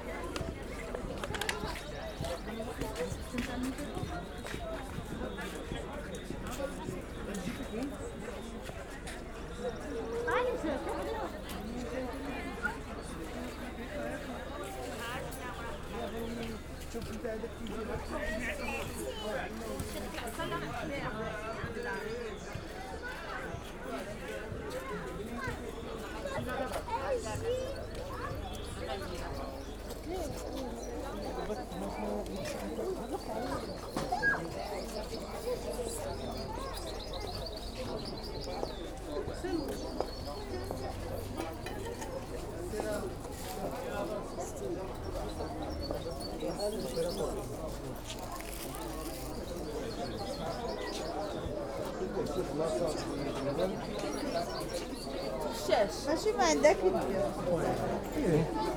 Rahba Kedima, Marrakesh, Marokko - market walk
Marrakesh, Median, walk over market area at Rahba Kedima
(Sony D50, DPA4060)
Marrakesh, Morocco, 25 February 2014